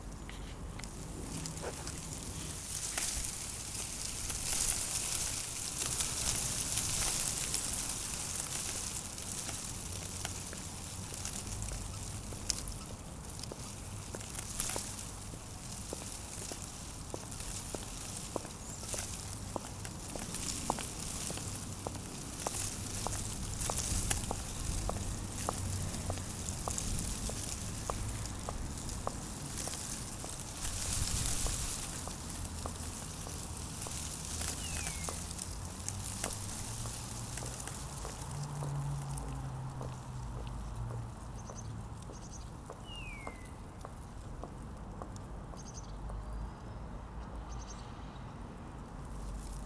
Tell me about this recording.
The wind in the arbours, in a very quiet park.